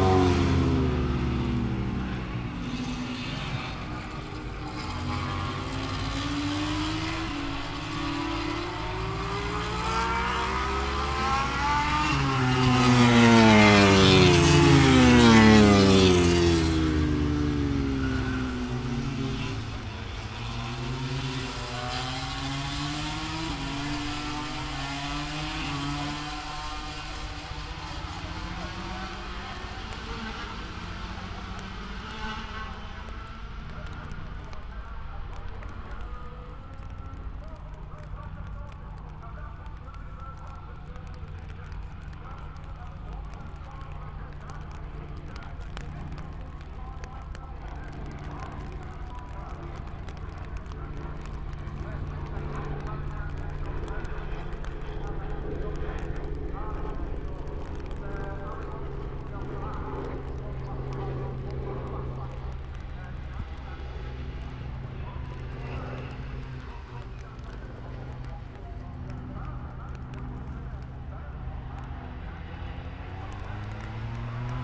moto grand prix qualifying one ... Vale ... Silverstone ... open lavalier mics clipped to clothes pegs fastened to sandwich box on collapsible chair ... umbrella keeping the rain off ... very wet ... associated noise ... rain on umbrella ... music from onsite disco ... etc ...
Towcester, UK